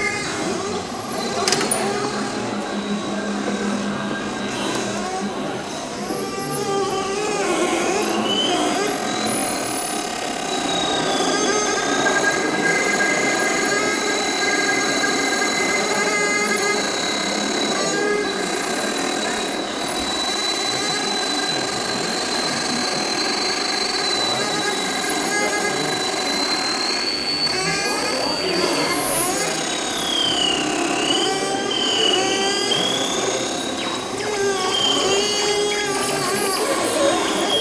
Lyon, Passage de l'Argue, Larseneur Orchestra